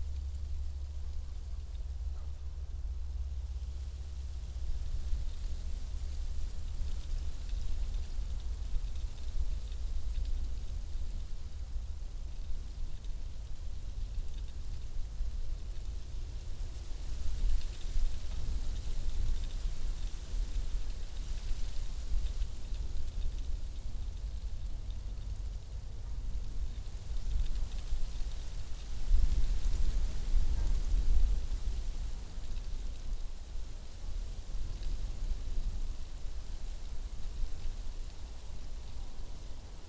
Atkočiškės, Lithuania, watcing eclipse of the sun - watcing eclipse of the sun
20 March 2015, ~12:00